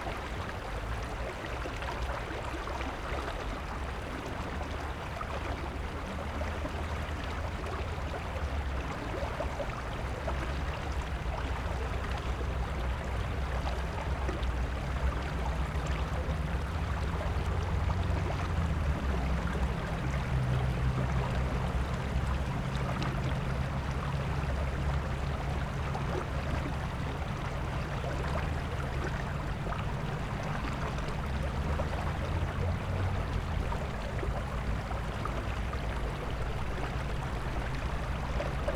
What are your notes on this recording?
there's a river under the street